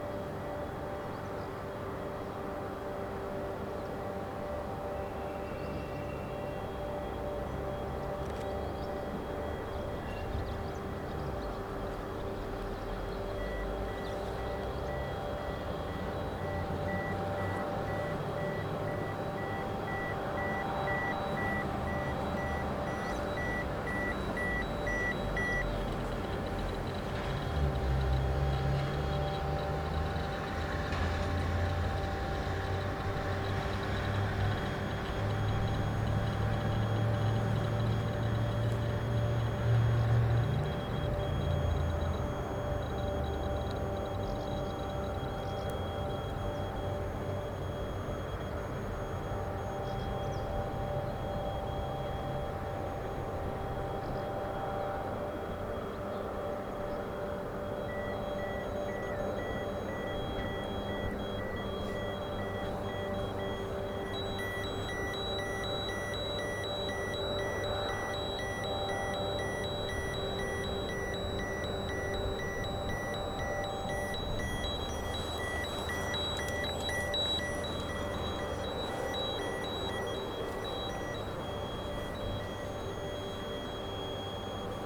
Chernobyl nuclear plant, Ukraine - Monster rumor
The rumor just in front of Lénine reactor.
zoom h4, shoeps RTF
16 May 2008